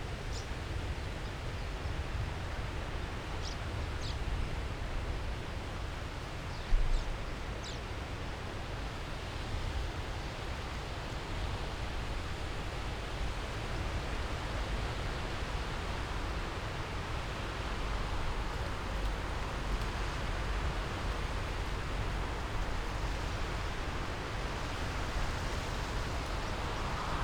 Chapel Fields, Helperthorpe, Malton, UK - approaching thunderstorm ...
approaching thunderstorm ... mics through pre-amp in SASS ... background noise ... traffic ... bird calls ... wood pigeon ... house sparrow ... tree sparrow ... house martin ... starling ... collared dove ... swift ...